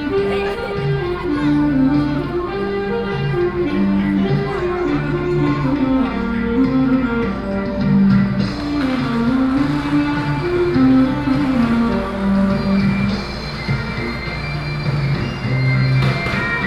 Ai 3rd Rd., 基隆市仁愛區 - Walk into the underground passage
Festivals, Walking on the road, Electronic firecrackers, Walk into the underground passage
Keelung City, Taiwan, 16 August 2016